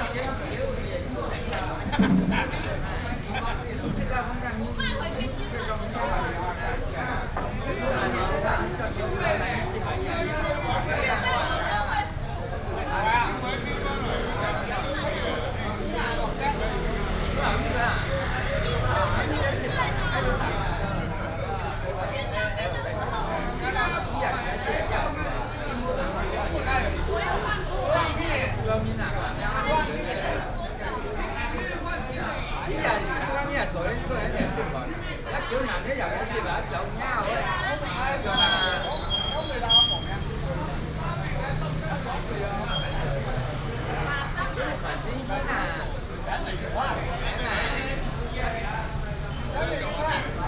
A lot of people are at the entrance to the park, Drinking and chatting, Binaural recordings, Sony PCM D100+ Soundman OKM II